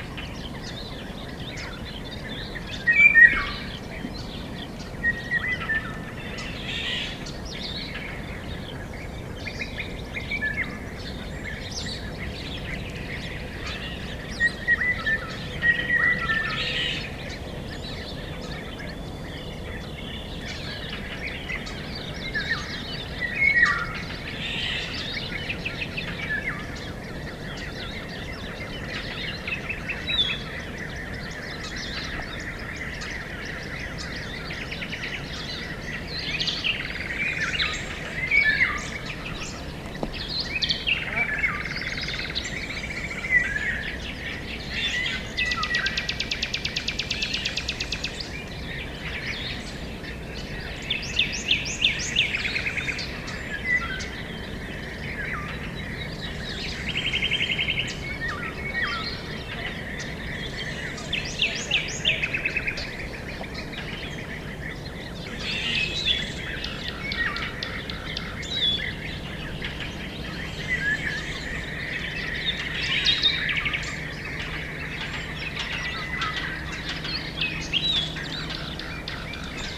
Loupian, France - 34140 Orioles
In this bauxite mine, today a big hole and a lake, orioles birds are confabulating.
5 May